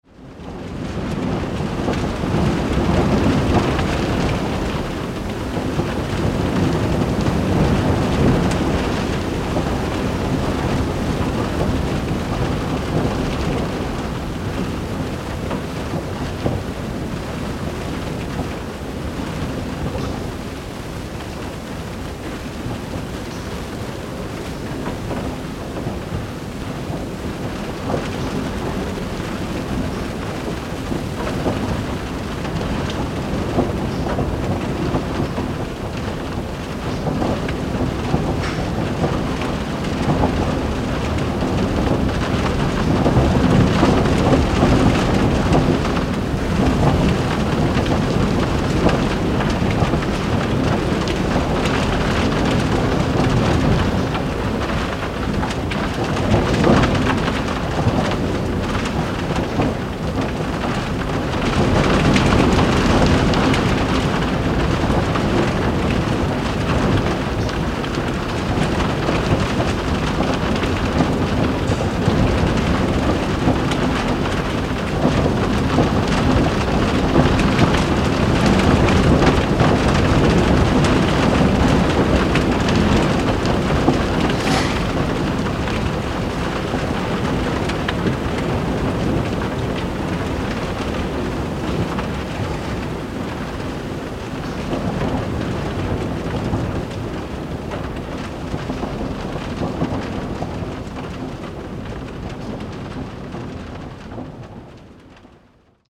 Digulleville, France - Semaphore
Wind and rain inside the semaphore (windows closed), Zoom H6
12 January 2017